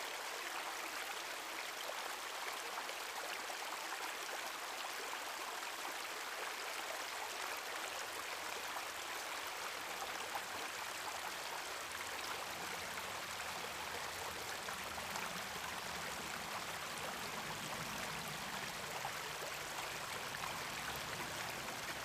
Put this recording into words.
creek is coming back into its shape after being broken down by cascades